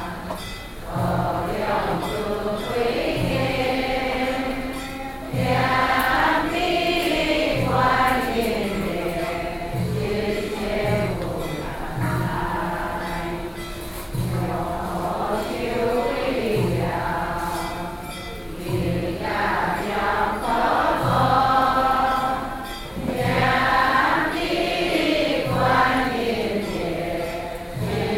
Mengjia Longshan Temple, Taipei City - Chant Buddhist scriptures